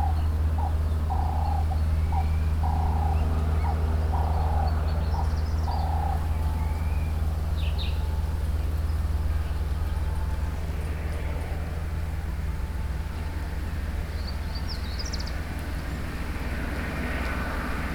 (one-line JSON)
{"title": "Lupane, Zimbabwe - morning sounds at CoCont...", "date": "2018-10-14 07:55:00", "description": "...we are at CoCont (Cont's place) somewhere in the Lupane bushland... the road between Bulawayo and Vic Falls is near by and a passing car or bus is heard occasionally... Sunday morning...", "latitude": "-18.90", "longitude": "27.73", "altitude": "1000", "timezone": "Africa/Harare"}